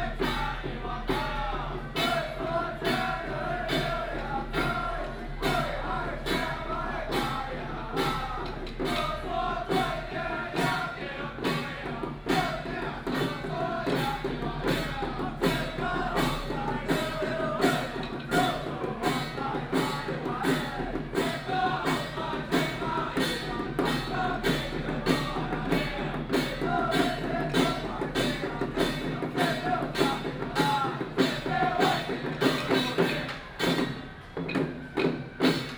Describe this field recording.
Traditional temple festivals, Firecrackers